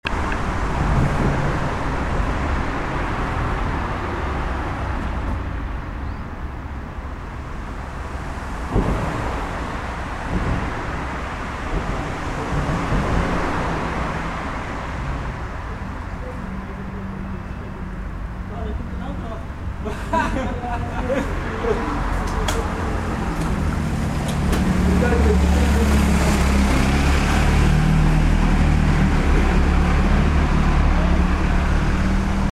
Another tunnel during soundwalk